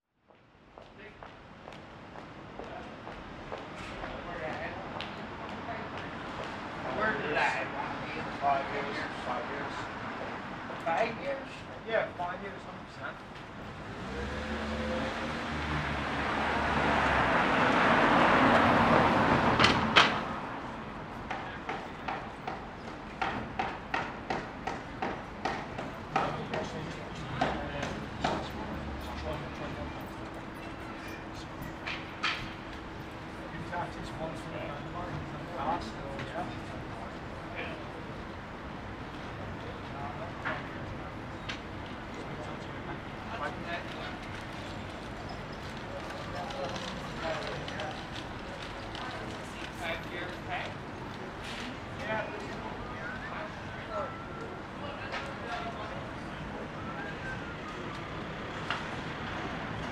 Church Ln, Belfast, UK - Church Lane
Recording in front of two bars which are now closed/closing (Bullitt - closed and Bootleggers - closing), at Bootleggers they were removing outdoor terrace fencing, multiple tools being used (hammers, drills, etc…), and a few passerby. Beginning of Lockdown 2 in Belfast.